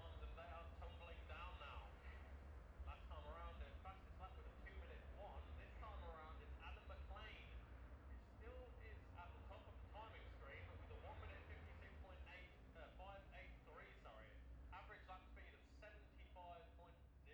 Jacksons Ln, Scarborough, UK - gold cup 2022 ... lightweight and 650 twins qualifying ......
the steve henshaw gold cup 2022 ... lightweight and 650 twins qualifying ... dpa 4060s on t-bar on tripod to zoom h5 ...
16 September 2022